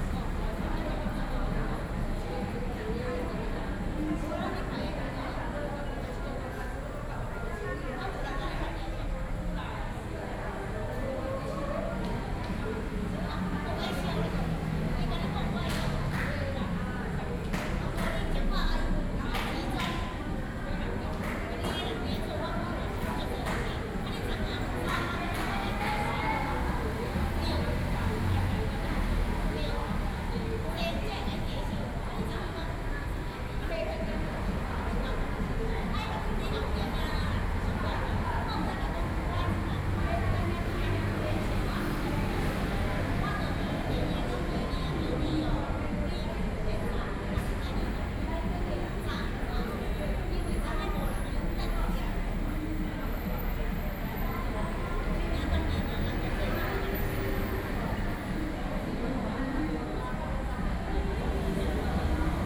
{
  "title": "Beitou - In the bottom of the track",
  "date": "2013-08-06 21:28:00",
  "description": "In the bottom of the track, Environmental Noise, Sony PCM D50 + Soundman OKM II",
  "latitude": "25.13",
  "longitude": "121.50",
  "altitude": "10",
  "timezone": "Asia/Taipei"
}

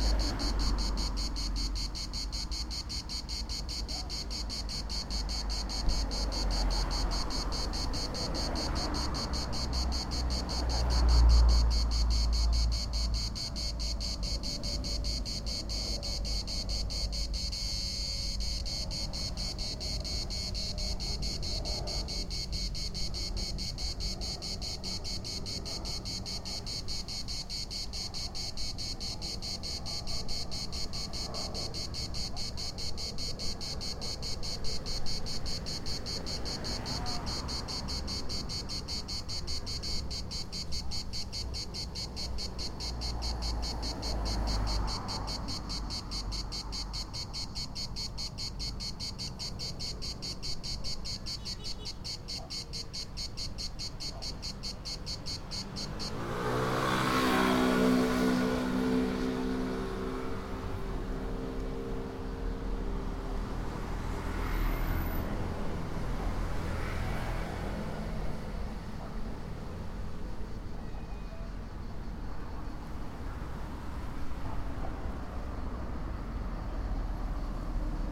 {
  "title": "France - Cicada",
  "date": "2013-07-13 14:19:00",
  "description": "We were walking along the beach and up Boulevard de Bacon, and I became aware that wherever there were trees, there was this incredible drone of what I think must be cicadas. It's an incredibly loud sound, a wash of white noise emanating all along the coast, from wherever there are trees. As we walked along the road, we became aware of one single cicada song standing out from all of the rest and I sat down to listen to and record the song with my trusty EDIROL R-09.",
  "latitude": "43.57",
  "longitude": "7.13",
  "altitude": "7",
  "timezone": "Europe/Paris"
}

